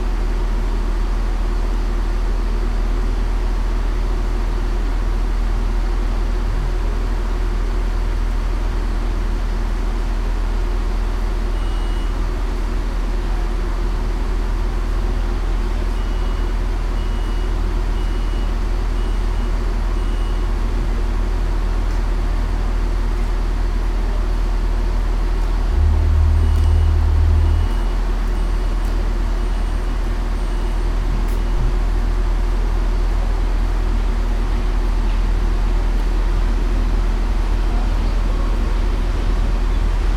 bahnhof lichtenberg, Berlin, germany - departure
take the night train to budapest.
on the platform.
2 x dpa 6060.
29 June, 19:47